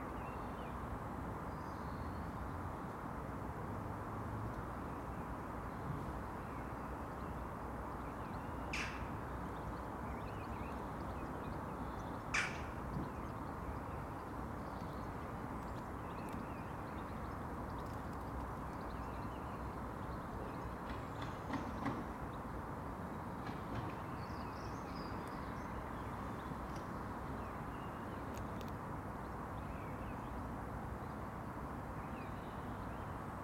{"title": "Contención Island Day 44 inner southwest - Walking to the sounds of Contención Island Day 44 Wednesday February 17th", "date": "2021-02-17 09:35:00", "description": "The Drive Moor Place Woodlands Oaklands\nMoss dots the pitted tarmac\namong the alleyway leaf litter\nA single Blue Tit\nand the distant calls of Jackdaws\nA blackbird materialises atop a mahonia\ndrops into the next door garden\nFive skeins of pinkfeet\nabout 150 birds\nfly north calling", "latitude": "55.00", "longitude": "-1.62", "altitude": "71", "timezone": "Europe/London"}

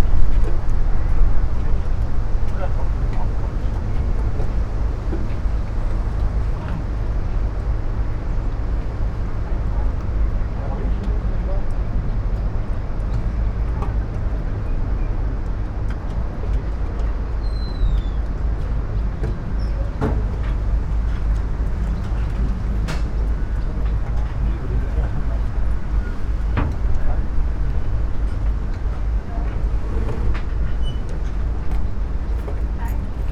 {"title": "river ship deck, Märkisches Ufer, Berlin, Germany - still sitting, listening", "date": "2015-09-13 14:57:00", "description": "river Spree, lapping waves\nSonopoetic paths Berlin", "latitude": "52.51", "longitude": "13.41", "altitude": "40", "timezone": "Europe/Berlin"}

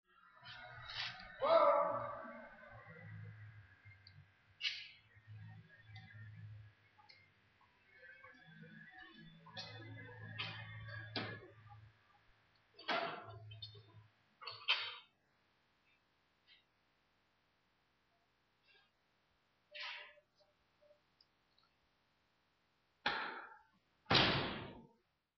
{"title": "Französischer Dom - Entrance", "date": "2010-02-01 15:51:00", "description": "Entrance of the Französischer Dom in Berlin. Recorded with Samsung NC10. End point soundwalk: ctm workshop 02 (2010-02-01 15:36:37, 00h 14min)", "latitude": "52.51", "longitude": "13.39", "altitude": "44", "timezone": "Europe/Berlin"}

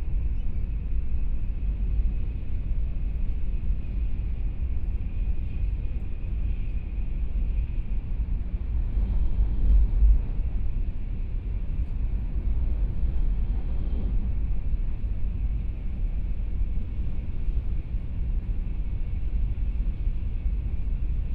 {"title": "Miaoli County, Taiwan - Taiwan High Speed Rail", "date": "2014-01-30 19:43:00", "description": "from Hsinchu Station to Taichung Station, Binaural recordings, Zoom H4n+ Soundman OKM II", "latitude": "24.45", "longitude": "120.70", "timezone": "Asia/Taipei"}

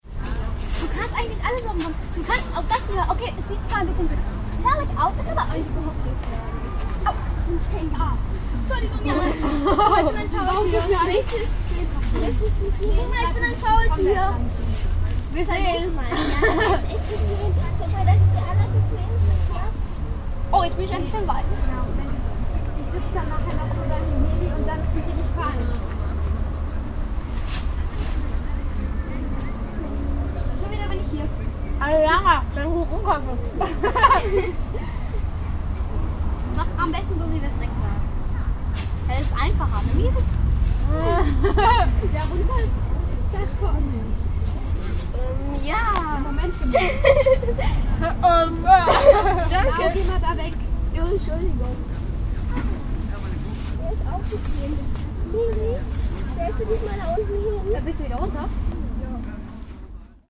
{"title": "cologne, stadtgarten, kletterbaum - cologne, stadtgarten, kletterbaum aufnahme 2", "date": "2008-05-21 17:03:00", "description": "stereofeldaufnahmen im september 07 - nachmittags\nproject: klang raum garten/ sound in public spaces - in & outdoor nearfield recordings", "latitude": "50.94", "longitude": "6.94", "altitude": "53", "timezone": "Europe/Berlin"}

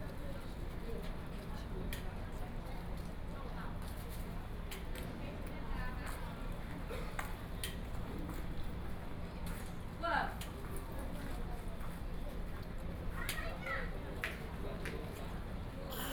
{"title": "Zhongli Station, Taoyuan County - platform", "date": "2013-08-12 15:09:00", "description": "On the platform waiting for the train, Zoom H4n+ Soundman OKM II", "latitude": "24.95", "longitude": "121.23", "altitude": "138", "timezone": "Asia/Taipei"}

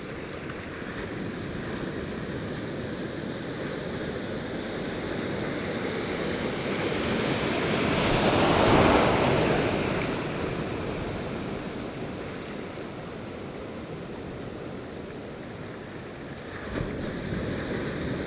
{"title": "Ars-en-Ré, France - Waves wheeling", "date": "2018-05-20 21:00:00", "description": "On a pier, it's a strong high tide. Big waves are rolling and wheeling along the jetty.", "latitude": "46.20", "longitude": "-1.52", "timezone": "Europe/Paris"}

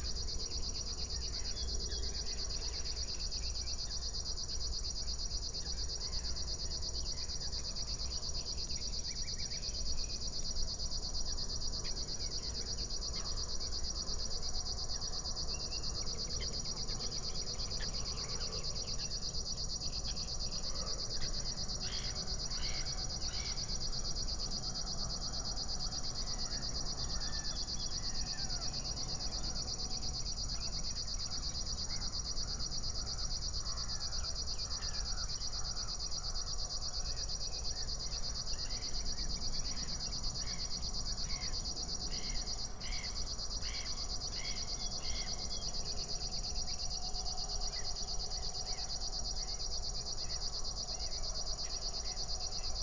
{"date": "2022-05-30 00:25:00", "description": "00:25 Berlin, Buch, Moorlinse - pond, wetland ambience", "latitude": "52.63", "longitude": "13.49", "altitude": "51", "timezone": "Europe/Berlin"}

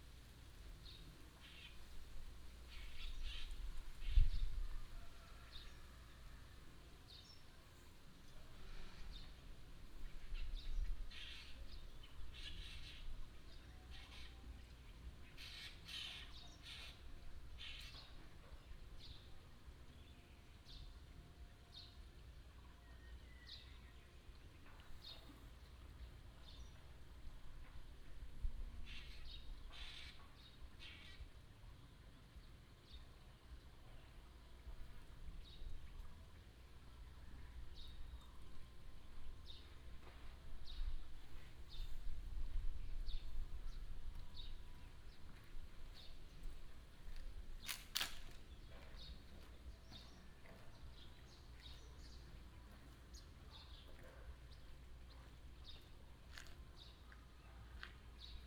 {"title": "Taiban, Daren Township, Taitung County - Beside the tribe primary school", "date": "2018-04-13 13:55:00", "description": "Beside the tribe primary school, Construction sound, School bell, Bird cry, Footsteps, Gecko call\nBinaural recordings, Sony PCM D100+ Soundman OKM II", "latitude": "22.48", "longitude": "120.91", "altitude": "224", "timezone": "Asia/Taipei"}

